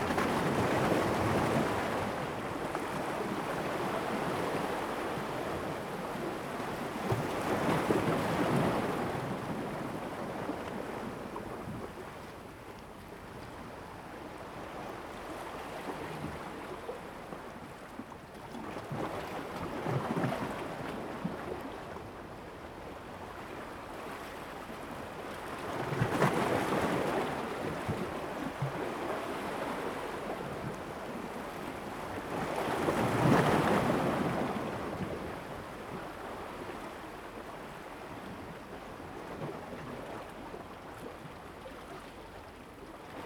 sound of the waves
Zoom H2n MS+XY